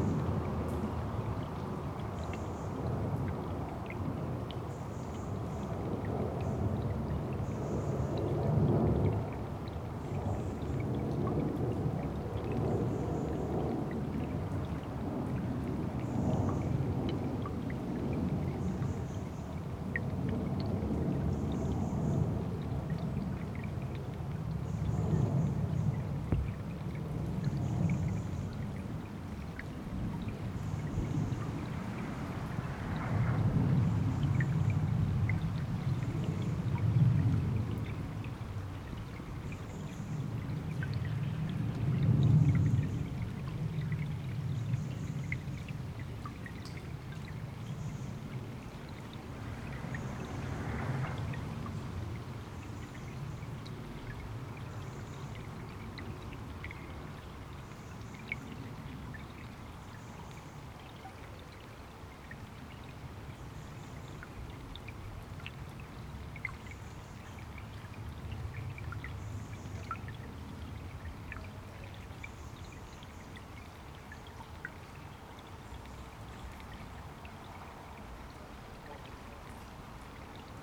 Foundry Ln, Newcastle upon Tyne, UK - Under the bridge, Byker Bank
Walking Festival of Sound
13 October 2019
Under the bridge
Stereo recording (L track DPA4060 omni microphone; R track Aquarian 2 hydrophone), Sound Devices MixPre6
Location
Riverside footpath by the Ouseburn as it passes below the road at Byker Bank
Byker Bank
Newcastle upon Tyne NE6 1LN
54.973393, -1.590369
North East England, England, United Kingdom, 13 October 2019